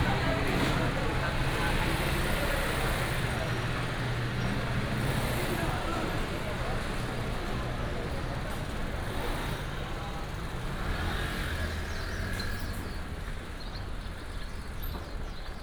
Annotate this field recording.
Walking in the Fruit wholesale market, Traffic sound